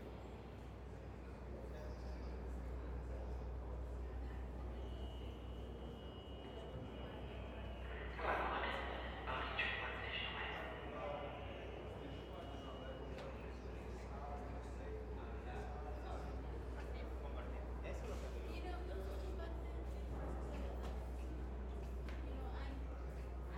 Willoughby St, Brooklyn, NY, USA - Jay Street–MetroTech Station at Night
Jay Street–MetroTech Station.
Late-night commuters, and train announcements.
United States, 28 February 2022, 22:41